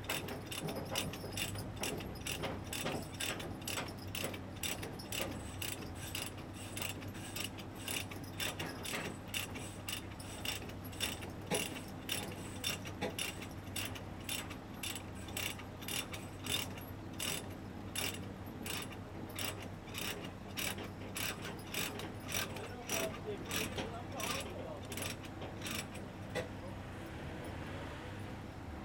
Gartenstraße, Mitte, Berlin, Deutschland - Building site Gartenstraße, Berlin - pulling tight the asphalt milling machine on a truck
Building site Gartenstraße, Berlin - pulling tight the asphalt milling machine on a truck [I used the Hi-MD-recorder Sony MZ-NH900 with external microphone Beyerdynamic MCE 82]
21 June 2011, 13:55